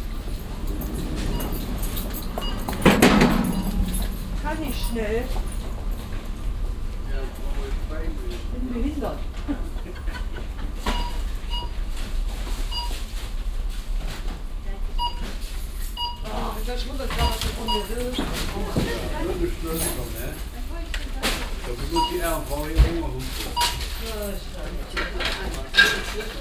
refrath, lustheide, billigmarkt, verkaufsraum
noch nicht auf der aktuellen google map- aber inzwischen errichtet - gebäude einer billigmarktkette - hier eine aufnahme aus dem verkaufsraum
soundmap nrw - social ambiences - sound in public spaces - in & outdoor nearfield recordings